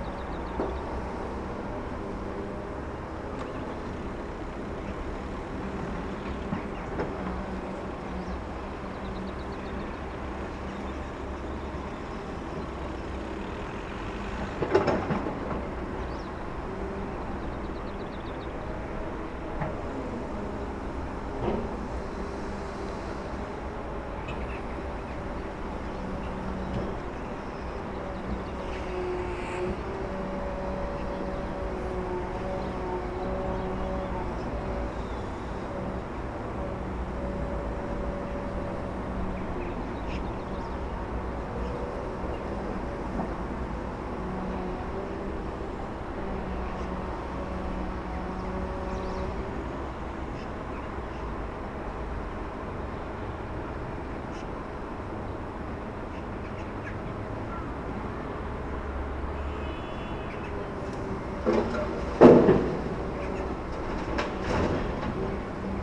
Żołnierska, Olsztyn, Poland - Obserwatorium - Północ
Recorded during audio art workshops "Ucho Miasto" ("Ear City"):
3 June 2014, 16:55